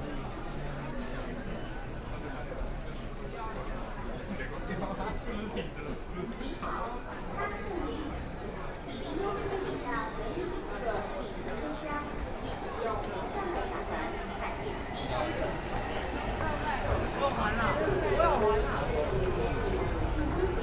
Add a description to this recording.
from Chiang Kai-shek Memorial Hall Station to Ximen Station, Binaural recordings, Sony PCM D50 + Soundman OKM II